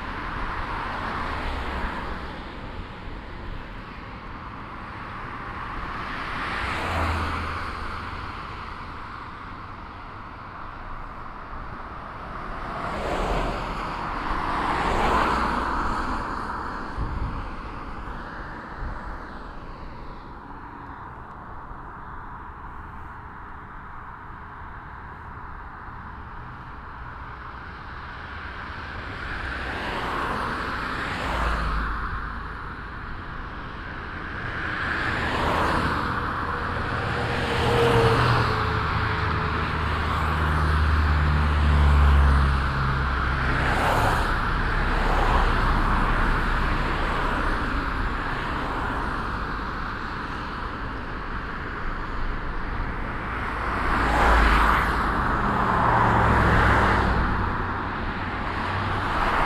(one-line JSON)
{"title": "vancouver, granville bridge, on the walk way", "description": "walking by night across this gigh bridge with a very narrow footway. traffic passing by.\nsoundmap international\nsocial ambiences/ listen to the people - in & outdoor nearfield recordings", "latitude": "49.27", "longitude": "-123.13", "timezone": "GMT+1"}